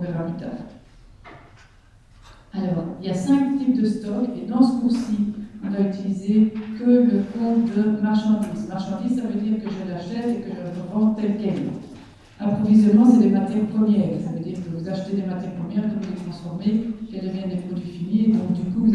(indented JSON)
{
  "title": "Ottignies-Louvain-la-Neuve, Belgique - A course of accounting",
  "date": "2016-03-11 17:45:00",
  "description": "A course of accounting in the Agora auditoire.",
  "latitude": "50.67",
  "longitude": "4.61",
  "altitude": "115",
  "timezone": "Europe/Brussels"
}